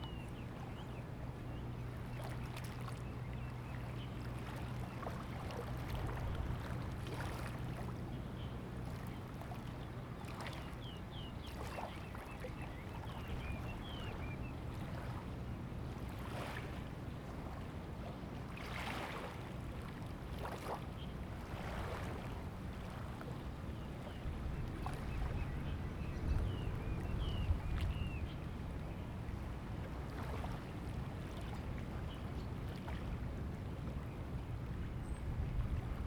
Pier area at fishing port, birds sound, Pier area at fishing port, Fishing boat returns to the dock, tide
Zoom H2n MS+XY
Hengchun Township, 台26線8號, 23 April 2018